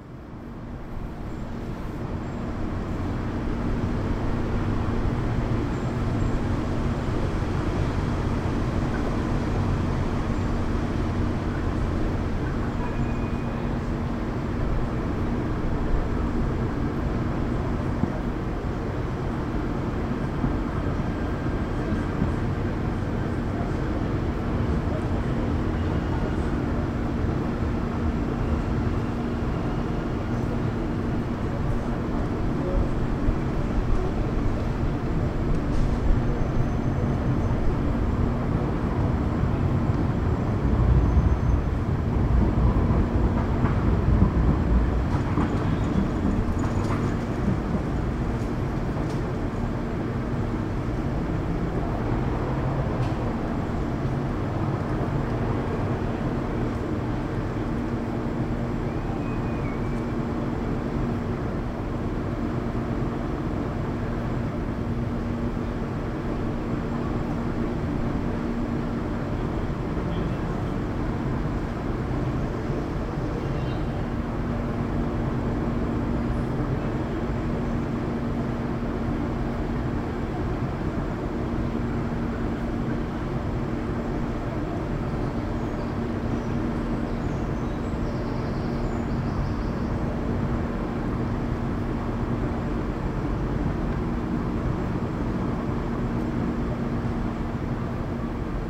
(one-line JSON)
{"title": "Frederiksplein 26, Amsterdam, The Netherlands", "date": "2010-07-20 13:18:00", "description": "world listening day, WLD, sorry this is late, tram, track repair, Weteringschans, Stadhouderskade, big hum", "latitude": "52.36", "longitude": "4.90", "altitude": "-1", "timezone": "Europe/Amsterdam"}